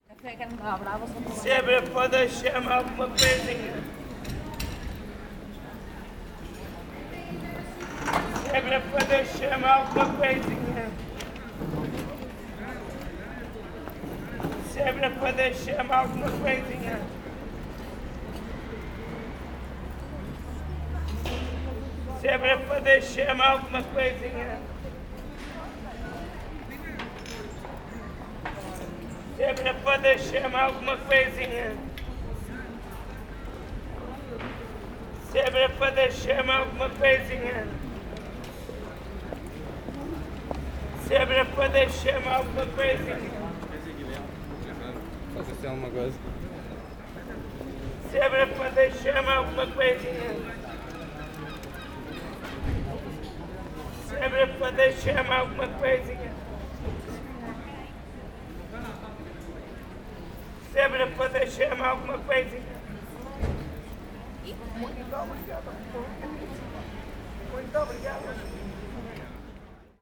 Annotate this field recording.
man with two wooden sticks moves arduously and slow along the street